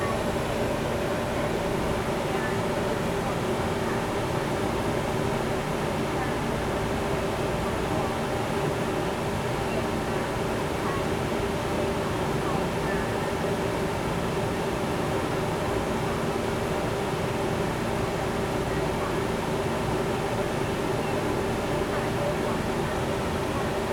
In the station platform, Birds singing, helicopter
Zoom H4n XY+Rode NT4
New Taipei City, Taiwan, 29 November, 15:39